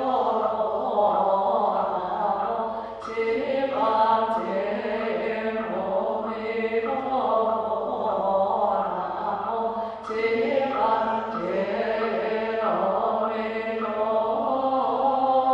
{"title": "Ackerstraße, Wedding, Berlin, Deutschland - Ackerstraße, Berlin - Singing during the mass in Buddhist temple Fo-guang-shan", "date": "2006-02-04 15:10:00", "description": "Ackerstraße, Berlin - Singing during the mass in Buddhist temple Fo-guang-shan.\n[I used an MD recorder with binaural microphones Soundman OKM II AVPOP A3]", "latitude": "52.54", "longitude": "13.38", "altitude": "38", "timezone": "Europe/Berlin"}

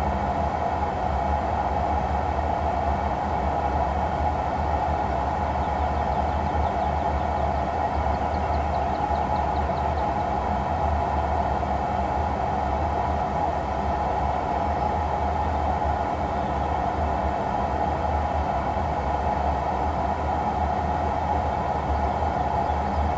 {"title": "opencast / Tagebau Hambach, near Elsdorf, Germany - water pipe, drainage", "date": "2013-07-02 19:00:00", "description": "lignite opencast Tagebau Hambach, one of the many water pipes for ground water removal around the pit. From Wikipedia:\nThe Tagebau Hambach is a large open-pit mine (German: Tagebau) in Niederzier and Elsdorf, North-Rhine Westphalia, Germany. It is operated by RWE and used for mining lignite. Begun in 1978, the mine currently has a size of 33.89 km² and is planned to eventually have a size of 85 km². It is the deepest open pit mine with respect to sea level, where the ground of the pit is 293 metres (961 ft) below sea level.\n(Sony PCM D50)", "latitude": "50.94", "longitude": "6.50", "altitude": "89", "timezone": "Europe/Berlin"}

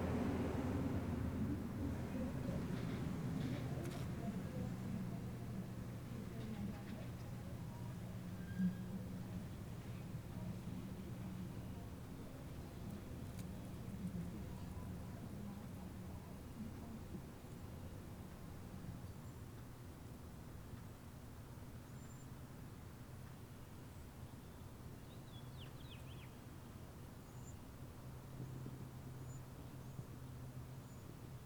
{"title": "Waters Edge - Spring Afternoon", "date": "2022-04-02 12:43:00", "description": "Microphone in the front of the house facing the street. Birds, traffic, planes, and the neighbors can be heard.", "latitude": "45.18", "longitude": "-93.00", "altitude": "278", "timezone": "America/Chicago"}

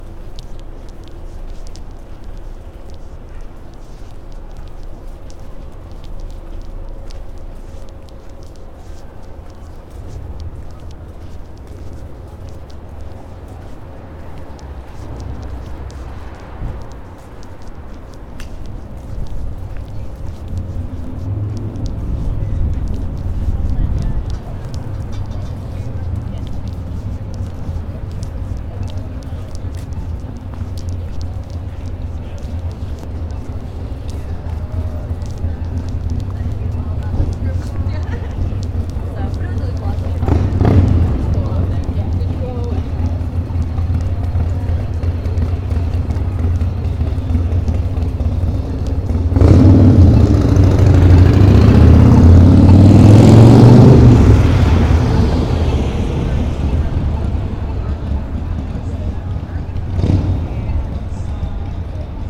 {"title": "Washington Street, Binghamton, NY - Downtown Binghamton", "date": "2018-11-07 21:30:00", "description": "Late Night Stroll in Downtown Binghamton.", "latitude": "42.10", "longitude": "-75.91", "altitude": "261", "timezone": "America/New_York"}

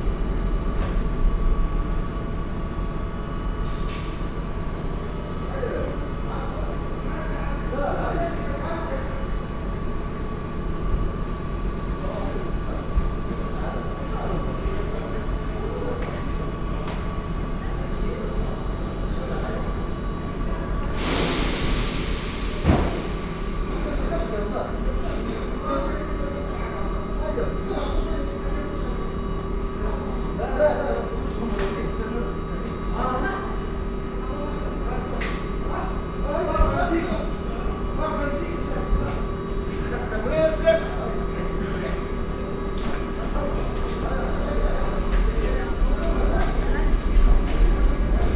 {"date": "2008-10-24 07:44:00", "description": "Brussels, Midi Station, Platform 17.\nA family is running to catch the train.", "latitude": "50.84", "longitude": "4.34", "timezone": "Europe/Brussels"}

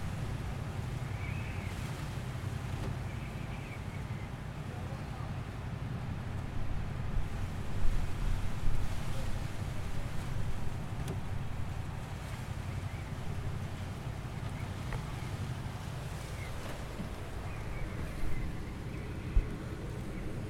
{"title": "Wooden shed for bowls players Rue Hippolyte Durand, Saint-Nazaire, France - Wooden shed for bowls players Saint-Nazaire", "date": "2021-02-20 15:10:00", "description": "recorded with Zoom H4", "latitude": "47.27", "longitude": "-2.20", "altitude": "7", "timezone": "Europe/Paris"}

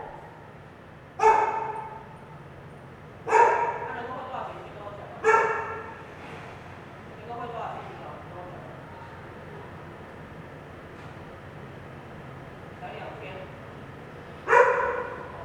{"title": "福和大戲院, Yonghe Dist., New Taipei City - Old community building", "date": "2012-02-15 19:06:00", "description": "Old community building, Stop theater operations, Sony ECM-MS907, Sony Hi-MD MZ-RH1", "latitude": "25.00", "longitude": "121.53", "altitude": "21", "timezone": "Asia/Taipei"}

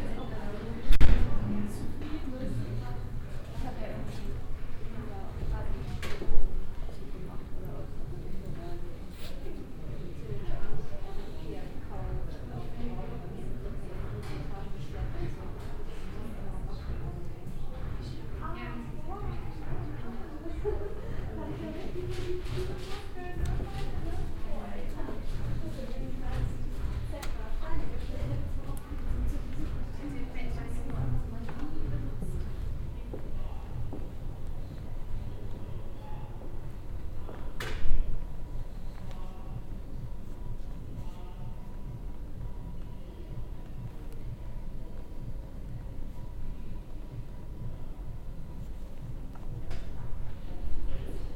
{"title": "Düsseldorf, tanzhaus nrw, corridor - düsseldorf, tanzhaus nrw, gang an probestudios", "date": "2009-01-24 17:29:00", "description": "Walking on a corridor, passing by dance studios with workshops going on\nsoundmap nrw: social ambiences/ listen to the people - in & outdoor nearfield recordings", "latitude": "51.22", "longitude": "6.80", "altitude": "41", "timezone": "Europe/Berlin"}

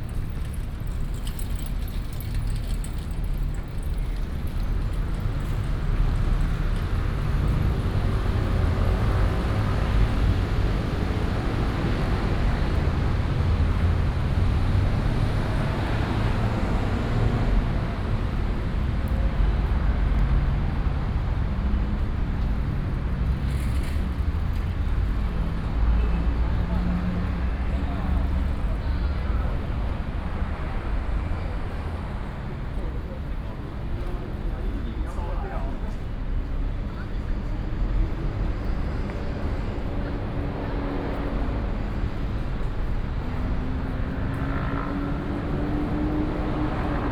{"title": "Zhoushan Rd., Da’an Dist., Taipei City - walking In the university", "date": "2016-02-22 10:58:00", "description": "Bird calls, Traffic Sound, walking In the university", "latitude": "25.01", "longitude": "121.54", "altitude": "13", "timezone": "Asia/Taipei"}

